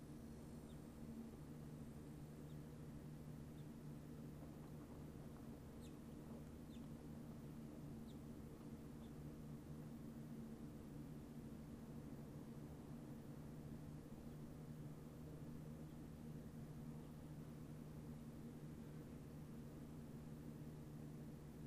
definitely a panting super hot puppy crashed in the shade also...
zoomh4npro